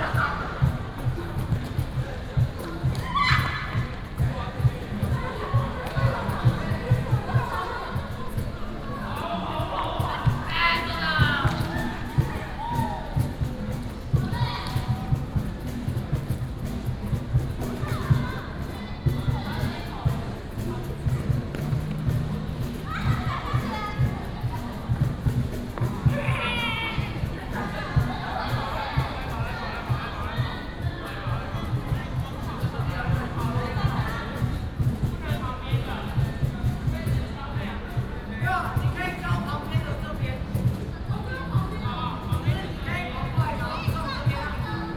Many high school students, game, High school student music association